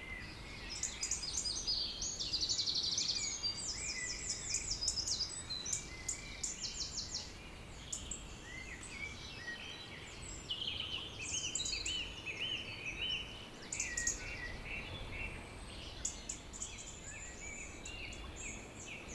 {"title": "Tregastel bourg, Pleumeur-Bodou, France - Evening village birds [Tregastel]", "date": "2019-04-22 20:25:00", "description": "Vers 20hr. Temps humide. présences de volatiles qui font des bruits.\nAround 8 pm. Humid weather. birds sings.\nApril 2019.", "latitude": "48.81", "longitude": "-3.50", "altitude": "31", "timezone": "Europe/Paris"}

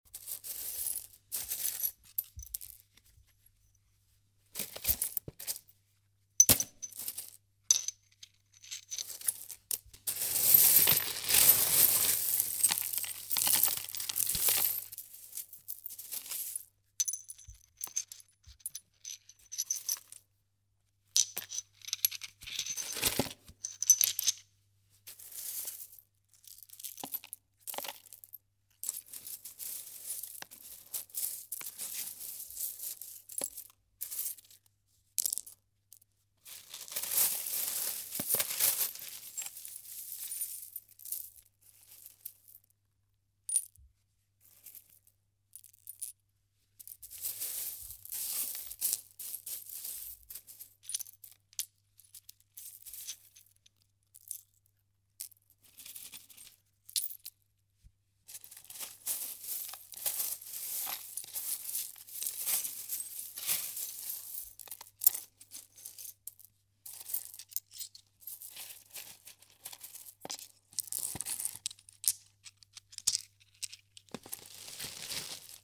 {"title": "erkrath, neandertal, altes museum, steinzeitwerkstatt", "description": "klänge in der steinzeitwerkstatt des museums neandertal - hier: sortieren der feuersteinabschläge\nsoundmap nrw: social ambiences/ listen to the people - in & outdoor nearfield recordings, listen to the people", "latitude": "51.22", "longitude": "6.95", "altitude": "97", "timezone": "GMT+1"}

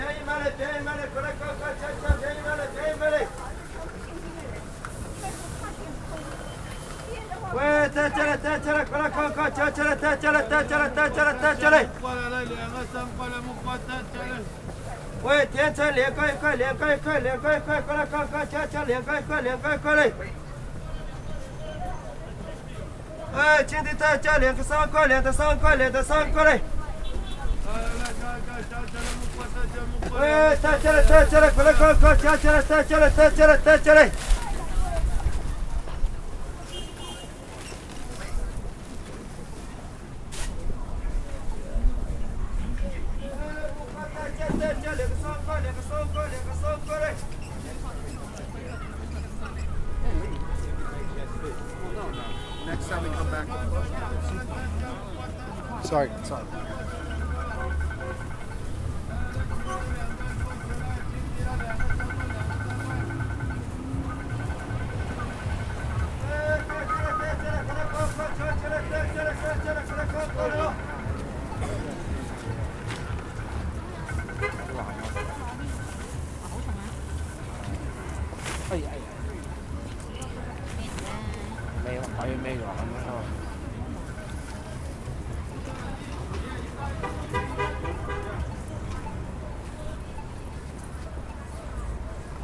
{"title": "Flushing, Queens, NY, USA - Sparkling Supermarket", "date": "2017-03-04 11:40:00", "description": "Vegetable sellers and a crowded sidewalk scene outside Sparkling Supermarket", "latitude": "40.76", "longitude": "-73.83", "altitude": "16", "timezone": "America/New_York"}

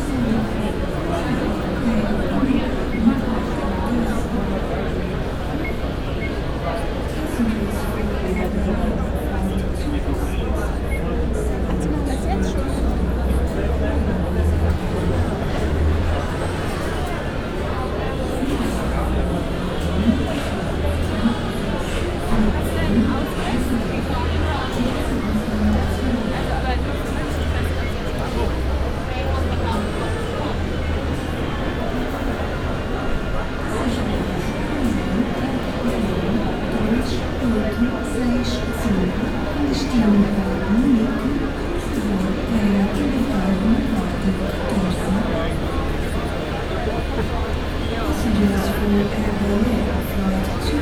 {"title": "Madeira, Aeroporto da Madeira - boarding crowd", "date": "2015-05-09 17:17:00", "description": "(binaural) waiting my for boarding turn in a huge crowd. three planes starting at the same time and the terminal is packed, noisy and humid.", "latitude": "32.69", "longitude": "-16.78", "altitude": "51", "timezone": "Atlantic/Madeira"}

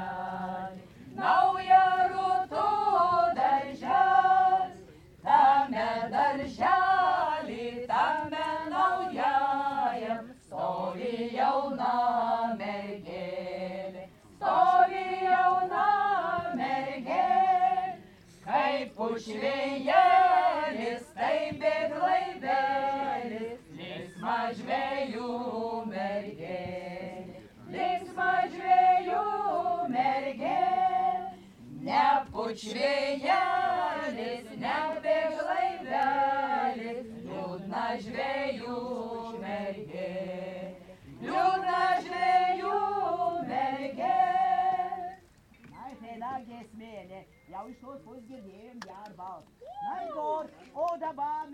{"title": "Kintai, Lithuania, launching of a new boat", "date": "2022-07-21 19:20:00", "description": "The celebration of new boat launching.", "latitude": "55.42", "longitude": "21.25", "timezone": "Europe/Vilnius"}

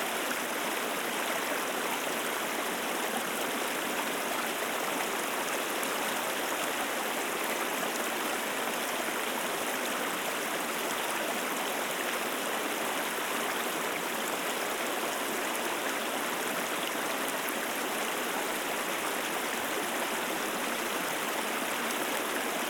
{"title": "Utena, Lithuania, at small dam", "date": "2021-12-06 16:50:00", "description": "Little river flowing through the park. Small natural dam. -10 degrees of Celsius - first colder day in this year. I just stand here and listen...Sennheiser Ambeo headset.", "latitude": "55.51", "longitude": "25.59", "altitude": "105", "timezone": "Europe/Vilnius"}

